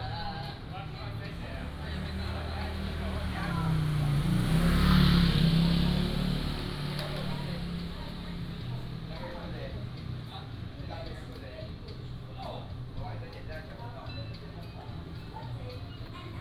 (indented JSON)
{
  "title": "南寮村, Lüdao Township - On the road",
  "date": "2014-10-31 12:59:00",
  "description": "On the road",
  "latitude": "22.67",
  "longitude": "121.47",
  "altitude": "7",
  "timezone": "Asia/Taipei"
}